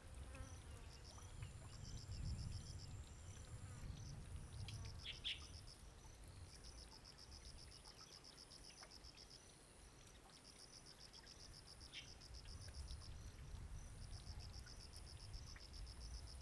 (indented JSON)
{
  "title": "大鳥溪, 大武鄉台東縣 - In the valley area",
  "date": "2018-04-13 17:10:00",
  "description": "In the valley area, Bird call, Dog barking, traffic sound\nZoom H2n MS+XY",
  "latitude": "22.38",
  "longitude": "120.90",
  "altitude": "28",
  "timezone": "Asia/Taipei"
}